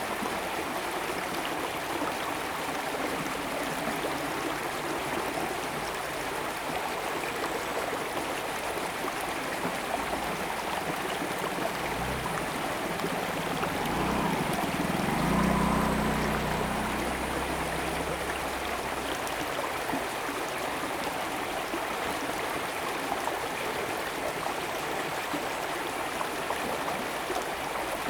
Irrigation waterway, The sound of water, Very hot weather
Zoom H2n MS+ XY
Taitung County, Taiwan, 7 September 2014